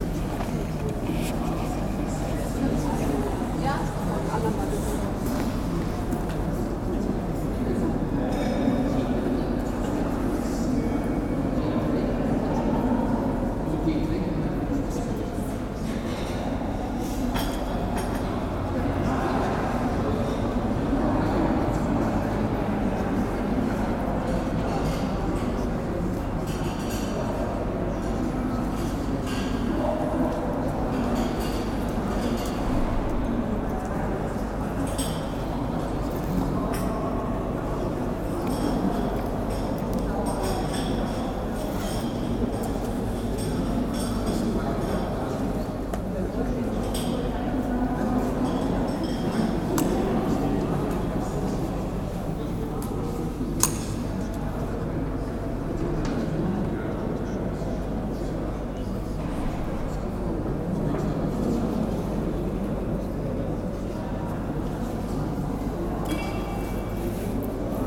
Cologne, Rautenstrauch-Joest-Museum - Entrance hall
Ambience in the foyer of the Rautenstrauch-Joest-Museum/ Cultures of the world.
During the break of a symposium groups of people stand around, talking. From the cafeteria the sound of removing dishes from the tables and preparing coffee.
Cologne, Germany, September 2013